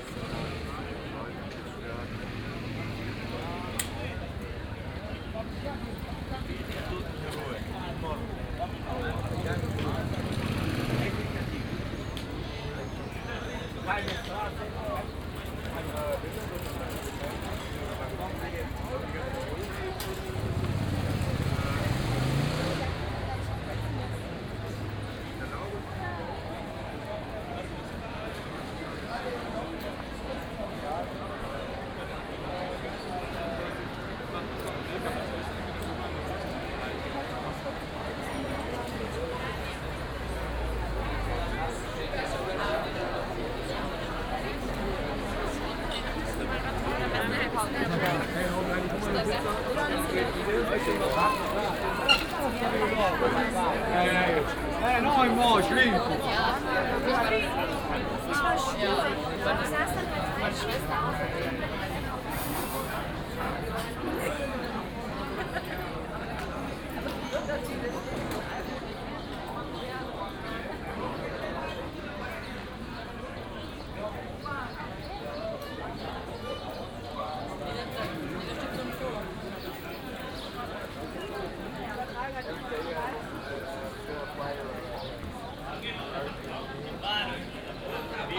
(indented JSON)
{
  "title": "admiralstraße: admiralbrücke - weekend evening soundwalk Admiralsbrücke",
  "date": "2010-06-05 20:55:00",
  "description": "given nice weather, this place is pretty crowded by all sort of people hanging around until late night. famous pizza casolare is just around the corner.",
  "latitude": "52.50",
  "longitude": "13.42",
  "altitude": "37",
  "timezone": "Europe/Berlin"
}